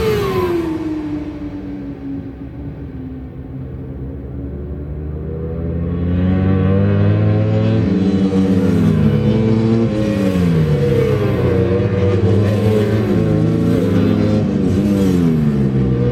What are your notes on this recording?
World Super Bikes warm up ... Brands Hatch ... Dingle Dell ... one point stereo mic to mini-disk ...